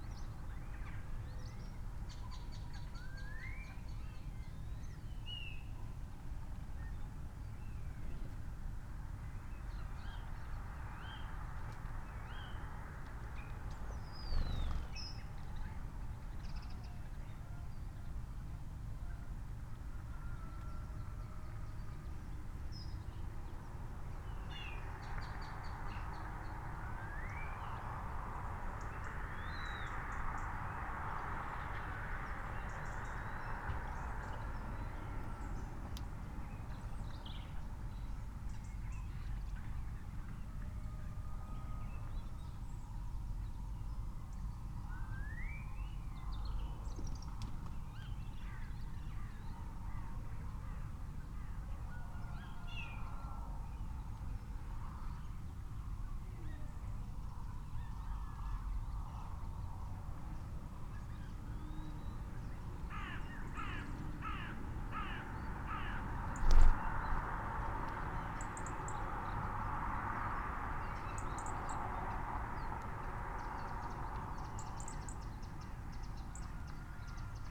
Luttons, UK - bird feeder soundscape ...
bird feeder soundscape ... SASS ... bird calls from ... pheasant ... crow ... red-legged partridge ... robin ... blackbird ... collared dove ... starling ... tawny owl ... wren ... dunnock ... magpie ... house sparrow ... background noise ...
Malton, UK, December 2019